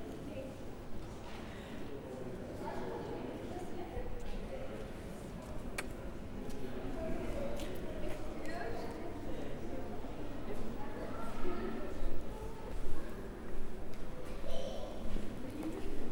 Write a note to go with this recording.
walking around a art exhibition around the markthale